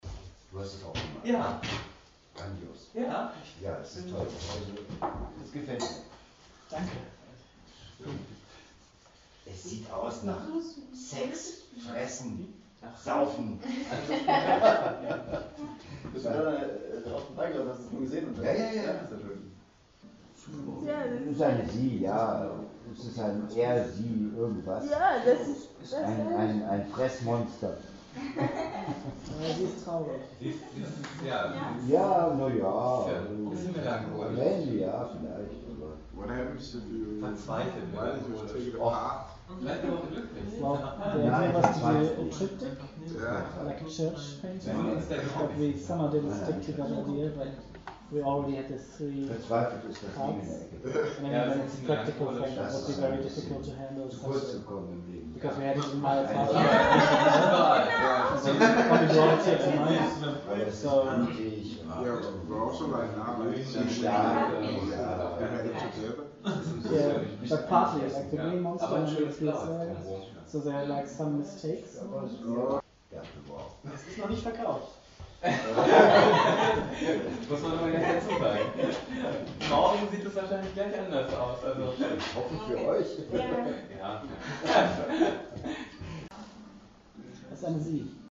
Ein Bild macht durch, Der Kanal, Weisestr. - Ein Bild regt an, Der Kanal Weisestr. 59
Zwei Freunde malen ein Bild in 24 Stunden. Das Triptychon während der Entstehung. Die Maler stellen aus heute abend, 30. Januar im Kanal, Weisestr. 59, 20 Uhr
Deutschland, European Union